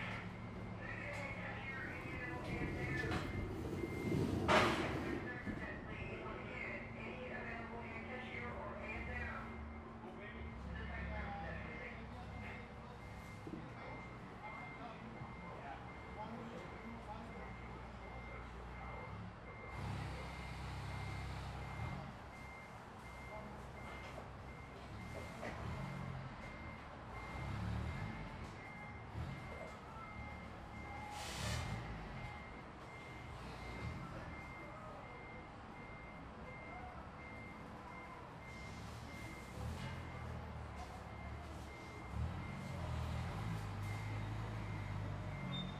{
  "title": "The Home Depot Emeryville 4.",
  "date": "2010-11-16 03:52:00",
  "description": "The Home Depot Emeryville",
  "latitude": "37.83",
  "longitude": "-122.28",
  "altitude": "8",
  "timezone": "US/Pacific"
}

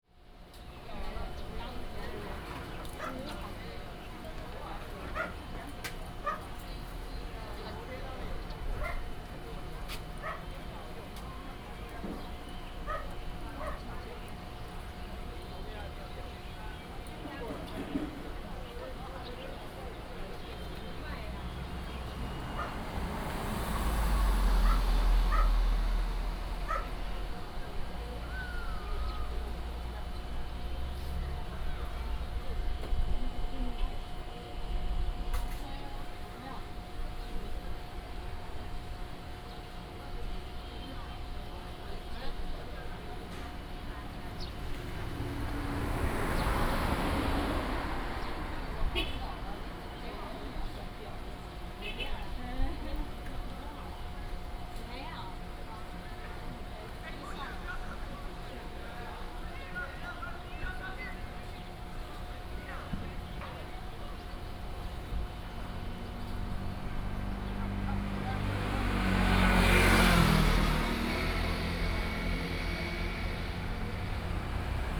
{
  "title": "Sec., Huhai Rd., Anle Dist., Keelung City - Next to the beach",
  "date": "2016-08-02 15:12:00",
  "description": "Next to the beach, Traffic Sound",
  "latitude": "25.17",
  "longitude": "121.71",
  "altitude": "5",
  "timezone": "Asia/Taipei"
}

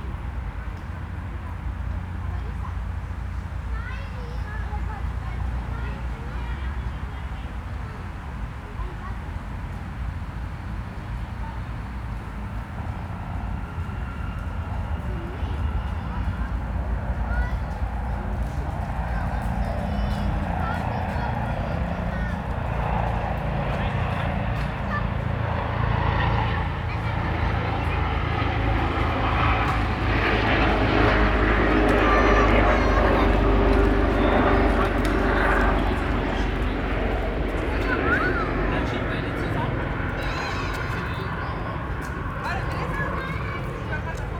{
  "title": "Berolinastraße, Berlin, Germany - Atmosphere by playground amongst exDDR apartment blocks",
  "date": "2021-09-01 17:57:00",
  "description": "The green areas amongst apartment blocks here are quiet spaces in the center of the city. There is not so much activity. Busy roads are distant while children playing close by. A helicopter passes by sounding loud for a moment. Sirens come and go.\nTrams rumble on the main roads contributing to the constant bass frequencies that permeate many city areas.",
  "latitude": "52.52",
  "longitude": "13.42",
  "altitude": "38",
  "timezone": "Europe/Berlin"
}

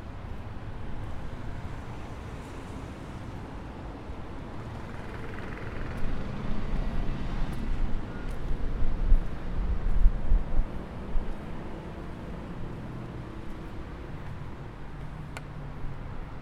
{
  "title": "De Berlagebrug, Amsterdam, Nederland - Na de brug.../ After the bridge...",
  "date": "2013-09-20 16:00:00",
  "description": "(description in English below)\nEr is veel verkeer op de Amsterdamse Berlagebrug. Nadat we via de brug het water waren overgestoken, gingen we via een trap richting de steiger aan het water, hier zit een roeivereniging. Het geluid verandert snel van zeer luidruchtig naar rustig waarbij voornamelijk het geluid van het water en de wind te horen zijn. In de verte is het verkeer van de brug nog wel hoorbaar.\nThere's a lot of traffic on the Berlage bridge in Amsterdam. After we passed the bridge we went downstairs towards the wharf, there's a rowing club. The sound changes quickly from an uproar to a stillness environment with only the sound of the water and the wind. You can hear the traffic from a distance.",
  "latitude": "52.35",
  "longitude": "4.91",
  "altitude": "4",
  "timezone": "Europe/Amsterdam"
}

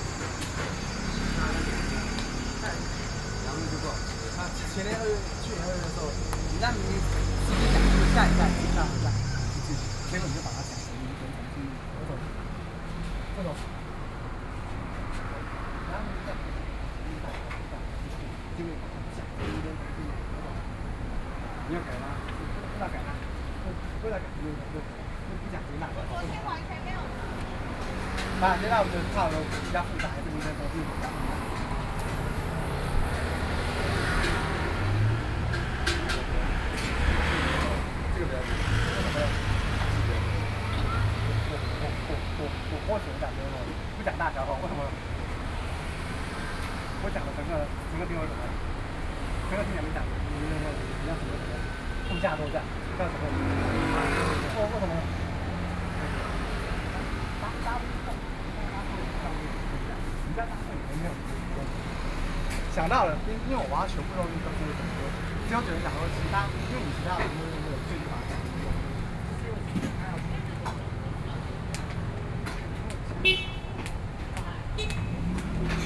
{
  "title": "北投區中和里, Taipei City - At the roadside",
  "date": "2014-06-21 22:15:00",
  "description": "In front of fried chicken shop, Traffic Sound\nSony PCM D50+ Soundman OKM II",
  "latitude": "25.14",
  "longitude": "121.50",
  "altitude": "29",
  "timezone": "Asia/Taipei"
}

